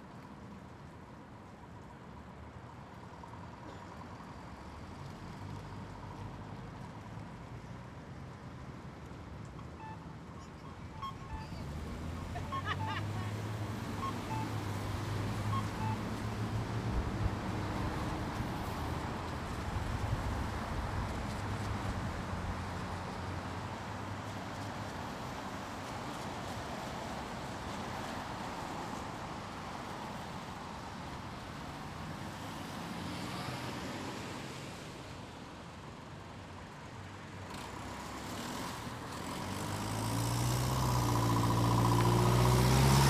Oakland - Broadway x 30th street pedestrian signals
most of thepedestrian signals in downtown Oakland go on 24/7 /that is about every 90sec. or so, making life of "sound sensitive" people living nearby very interesting - as a part of most annoying sounds - part 2.
CA, USA, 13 November, 04:00